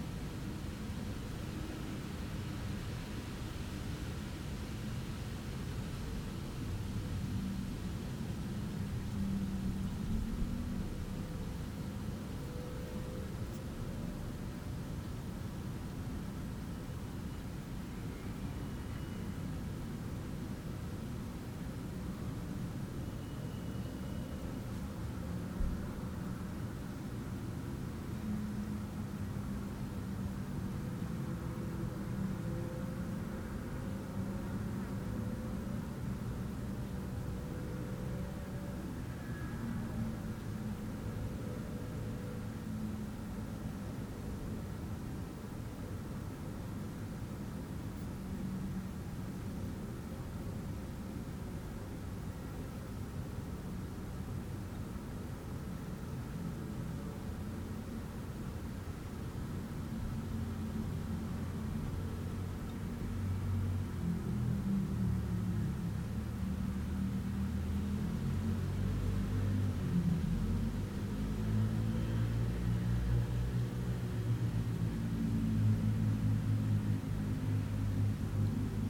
Le Mans, France - Near the grave
Near the grave of Jean-Luc Lecourt, a singer better known as Jean-Luc le Ténia. It means Jean-Luc, his first name, the tapeworm. He committed suicide on 2011, may 3. His tomb is completely empty, excerpt an only hot pepper pot. His name is hidden on the right of the grave. The google view is prior to 2011, as the place is empty.
Recording is 5 minuts of the very big silence near the grave.